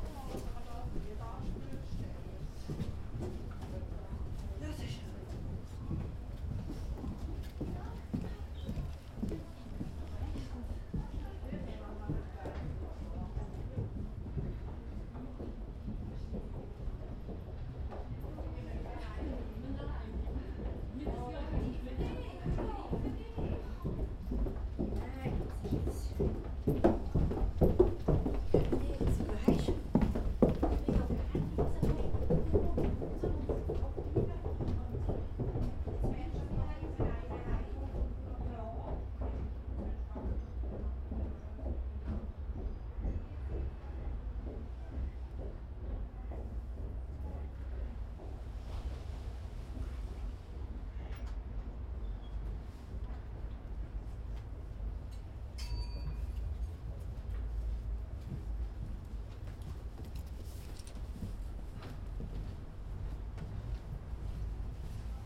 Kapellbrücke, Luzern, Schweiz - Kapellbrücke
Schritte, Holz, Stimmen
August 1998